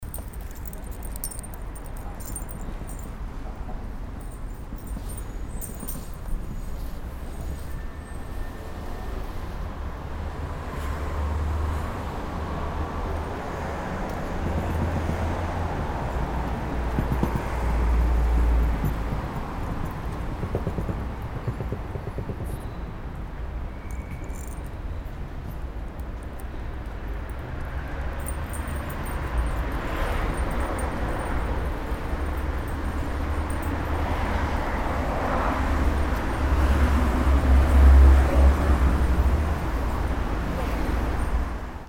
cologne, aachenerstrasse, small dog
kleiner dackel mit klingelndem halsband, läuft und pinkelt auf den bürgersteig, läuft weiter
soundmap nrw: social ambiences/ listen to the people - in & outdoor nearfield recordings